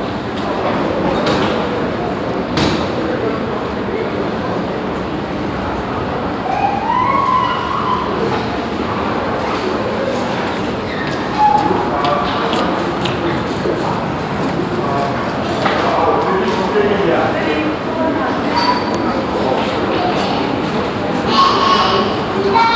Gallus, Frankfurt, Deutschland - frankfurt, main station, sbahn department
At the main station s-bahn tracks. The sound of the tunnel reverbing atmosphere and an announcemnt.